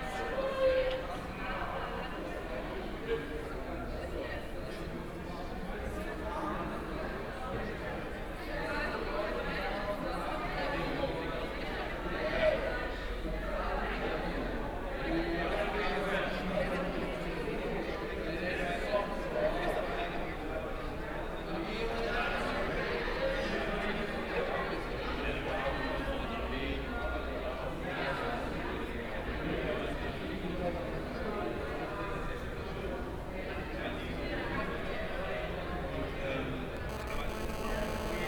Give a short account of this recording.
sounds of a party crowd celebrating a birthday, heard on the backyard balcony. Interesting reflections and echos from voices and other sounds. (Sony PCM D50, OKM2)